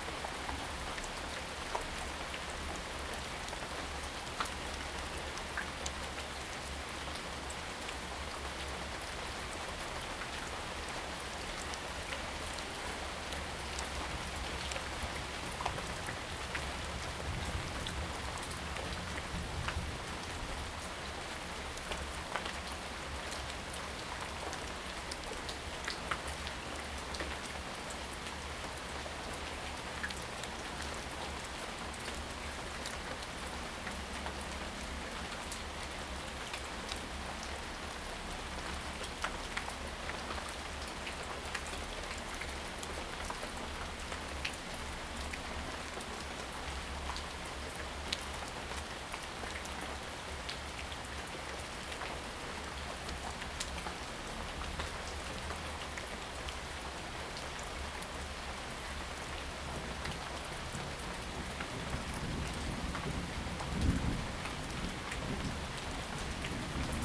富陽自然生態公園, 大安區, Taipei City - Rain and Thunder
Thunder, in the park, Rainy Day, Abandoned military trenches
Zoom H2N MS+XY
Da’an District, Taipei City, Taiwan, 4 July